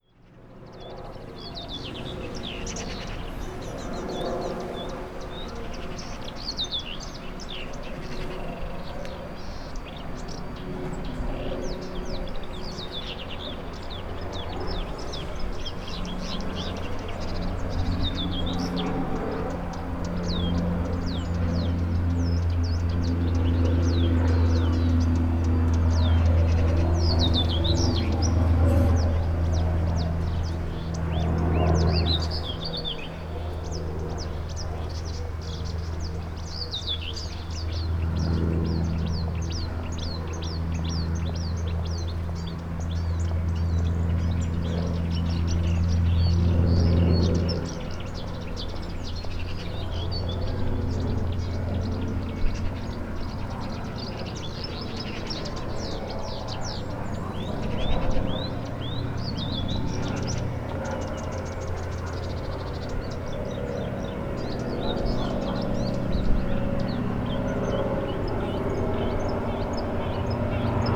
Poznan, balcony - sightseeing plane
engine rumble of a sightseeing plane making a circle over Sobieskiego housing district.
Poznan, Poland, 14 June 2015